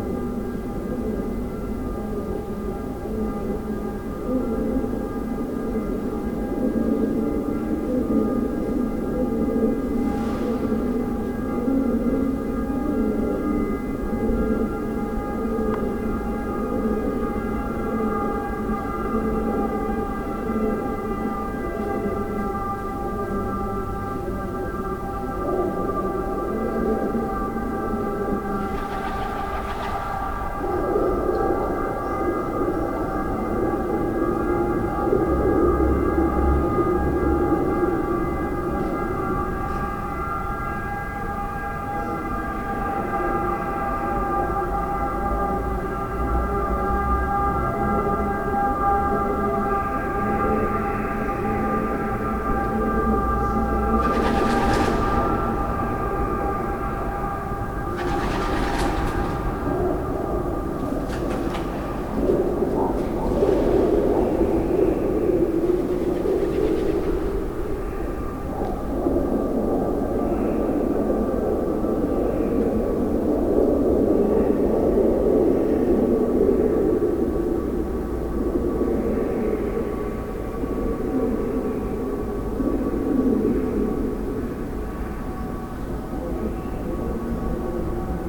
The 'Ear of Dionysius', an ancient artifical cave in Siracusa, Sicily: doves, ambience, siren sounds from the town.
TASCAM DR-2d, internal mics